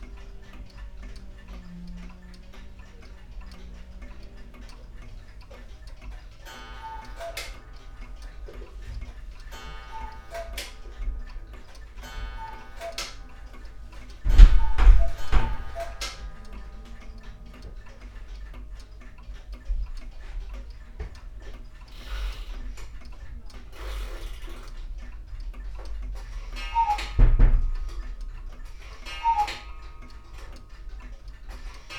{
  "title": "clockmaker, gosposka ulica, maribor - clocks and their sounds",
  "date": "2014-04-04 14:05:00",
  "latitude": "46.56",
  "longitude": "15.65",
  "altitude": "274",
  "timezone": "Europe/Ljubljana"
}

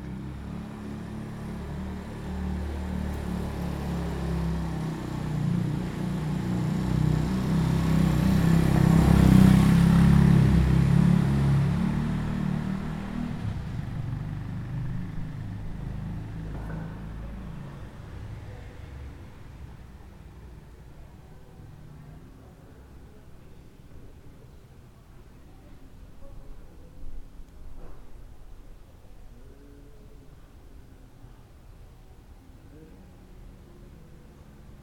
{
  "title": "Dousmani, Corfu, Greece - Dousmani Square - Πλατεία Δούσμανη",
  "date": "2019-04-17 10:19:00",
  "description": "A motorbike passing through the square. An old man is passing by. People chatting.",
  "latitude": "39.63",
  "longitude": "19.92",
  "altitude": "16",
  "timezone": "GMT+1"
}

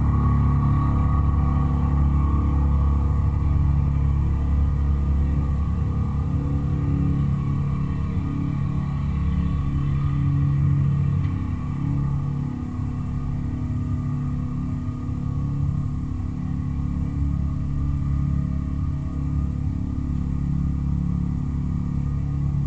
{
  "title": "Barge, Yeatman, Missouri, USA - Motorboat Barge Contact Mic",
  "date": "2020-10-09 14:49:00",
  "description": "A barge from a gravel dredging operation sits partially buried in a gravel bar. The cavernous interior is covered by sheet metal. A contact mic is attached to the sheet metal and records low sounds from a very slow motorboat in the Meramec River. The harmonics of the sound change as the boat approaches and passes the barge. Random objects also fall on the barge.",
  "latitude": "38.54",
  "longitude": "-90.61",
  "altitude": "126",
  "timezone": "America/Chicago"
}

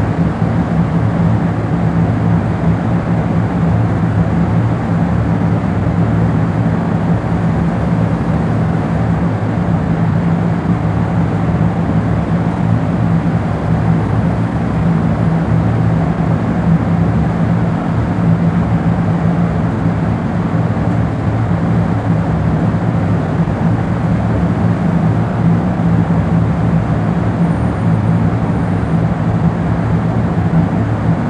{
  "title": "Folie-Méricourt, Paris, France - Underground Ventilation, Paris",
  "date": "2016-07-18 23:35:00",
  "description": "Drone sound of the undergound ventilation at Place de la Republique, Paris.\nZoom h4n",
  "latitude": "48.87",
  "longitude": "2.36",
  "altitude": "40",
  "timezone": "Europe/Paris"
}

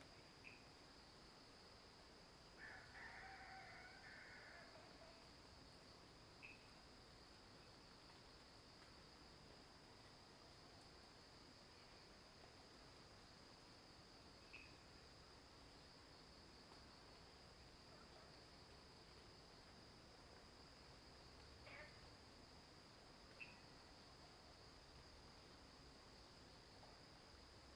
{
  "title": "Green House Hostel, 桃米里 - Early morning",
  "date": "2015-04-29 04:28:00",
  "description": "Frogs chirping, Early morning, Crowing sounds\nZoom H2n MS+XY",
  "latitude": "23.94",
  "longitude": "120.92",
  "altitude": "503",
  "timezone": "Asia/Taipei"
}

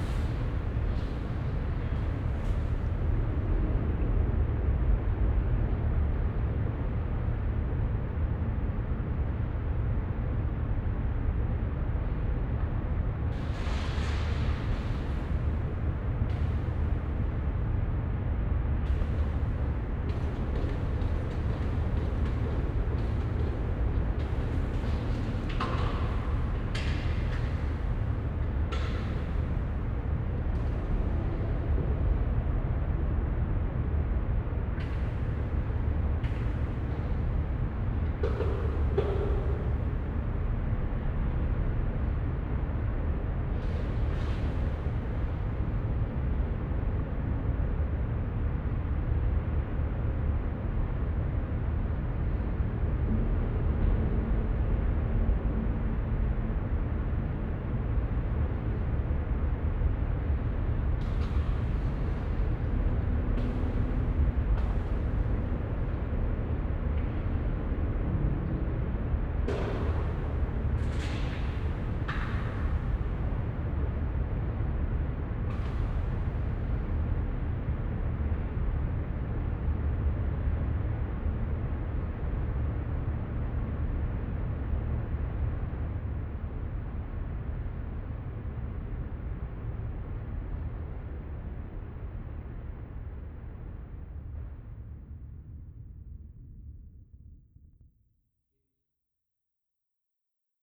{
  "title": "Mannesmannufer, Düsseldorf, Deutschland - Düsseldorf, KIT, end of exhibition hall",
  "date": "2012-11-19 13:15:00",
  "description": "Inside the under earth exhibition hall at the end of the hall. The sound of the traffic in the Rheinufertunnel reverbing in the long tube like space while an exhibition setup.\nsoundmap nrw - sonic states and topographic field recordings",
  "latitude": "51.22",
  "longitude": "6.77",
  "altitude": "35",
  "timezone": "Europe/Berlin"
}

